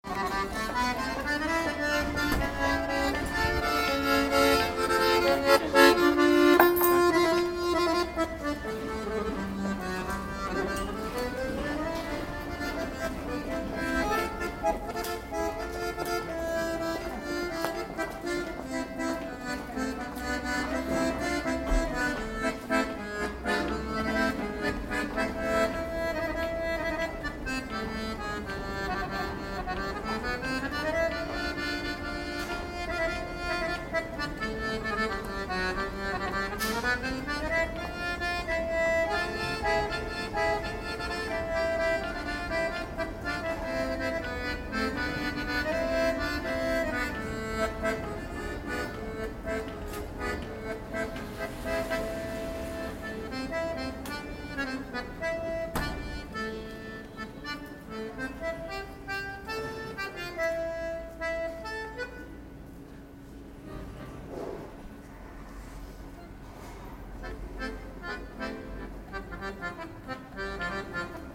accordeon player in pedestrian underway, st. gallen
a young accordeon player, partly in duet with building site. recorded sep 18th, 2008.
Saint Gallen, Switzerland